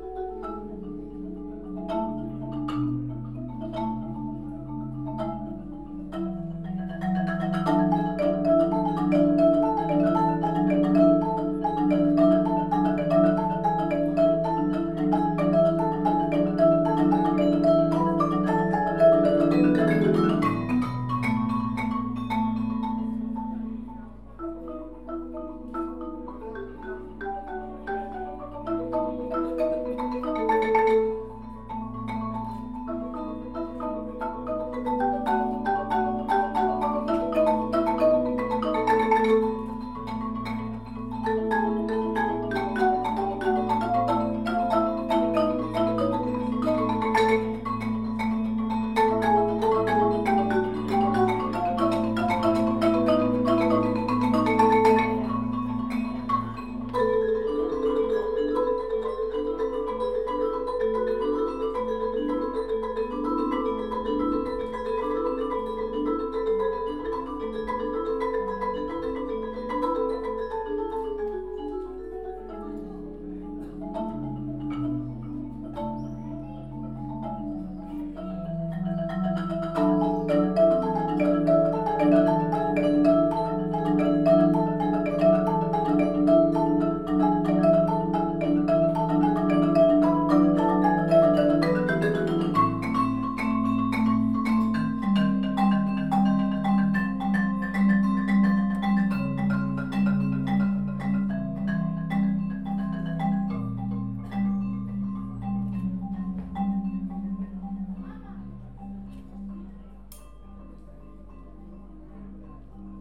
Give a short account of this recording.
Bumerang band (Zagreb, HR), gig. You can hear marimbas and various percussion instrument in a medieval solid rock amphitheater with a wooden roof. recording setup:omni, Marantz PMD 620 - portable SD/SDHC card recorder